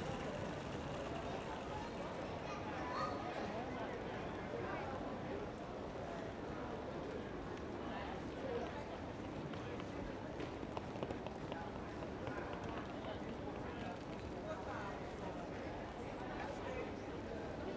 Hoog-Catharijne CS en Leidseveer, Utrecht, Niederlande - steps and cases 2
listen to the waves of people arriving and leaving - this recording follows as third to steps, steps and cases